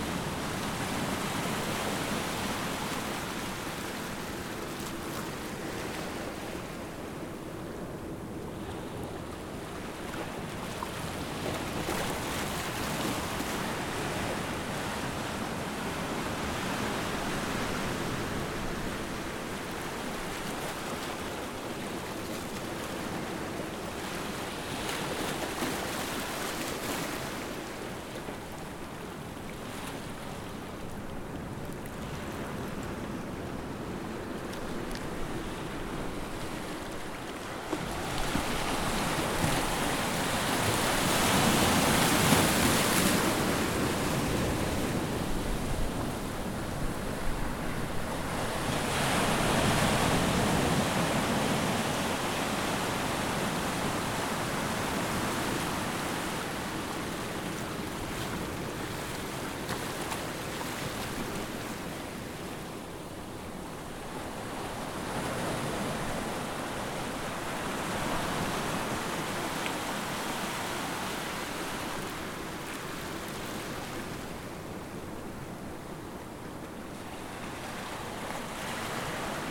Plage du Pont, Saint-Malo, France - pont's beach
Field recording of the waves on the rocks taken with H4n in stereo mode.
Taken from the rocks, close to the sea.
Nice weather, no wind.
Seagulls.
23 December 2016, 09:22